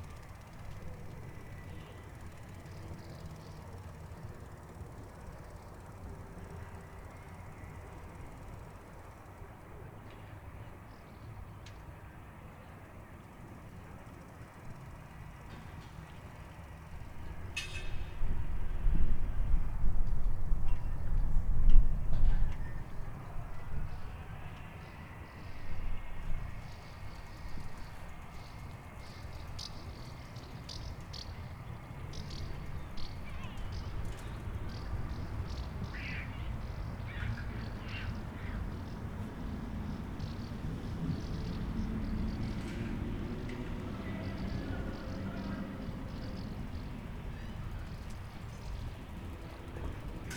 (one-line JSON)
{"title": "Oulu City Theatre, Oulu, Finland - Friday evening in front of the City Theatre", "date": "2020-06-12 19:43:00", "description": "Recorded between the City Theatre and library on a warm summer friday. Lots of people moving towards and from the city. Loud cars and motorbikes going to the parking lot of the library to hang out. Zoom H5 with default X/Y capsule.", "latitude": "65.01", "longitude": "25.46", "altitude": "15", "timezone": "Europe/Helsinki"}